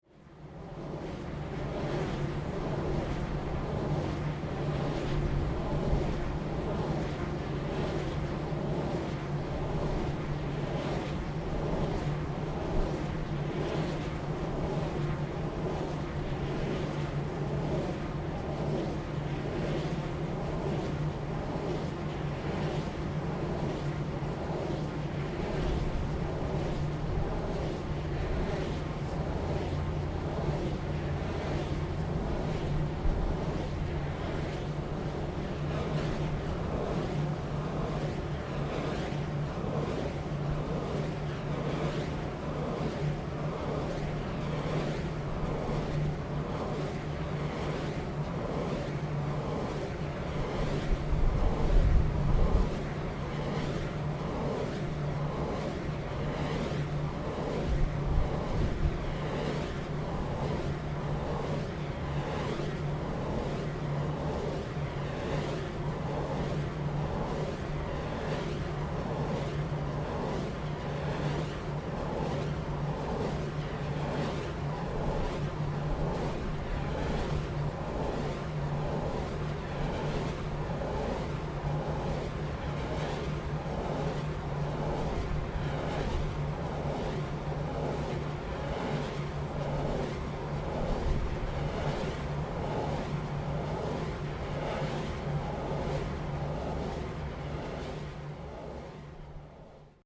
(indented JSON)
{
  "title": "觀音海水浴場, Guanyin Dist., Taoyuan City - Wind power tower",
  "date": "2017-01-04 08:58:00",
  "description": "Wind power tower, In the wind power tower below\nZoom H2n MS+XY",
  "latitude": "25.05",
  "longitude": "121.08",
  "altitude": "7",
  "timezone": "GMT+1"
}